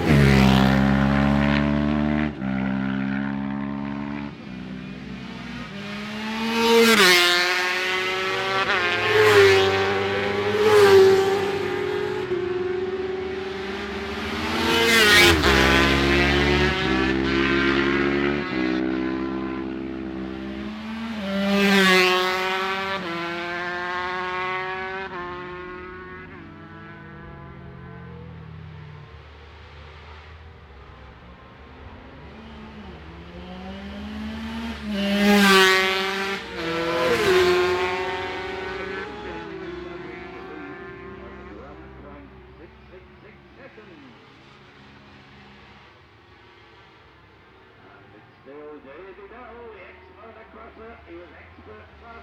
Jacksons Ln, Scarborough, UK - Barry Sheene Classic Races 2009 ...
Barry Sheene Classic Races 2009 ... 400 race with 125 ... 250 ... 400 ... one point stereo mic to minidisk ...
May 2009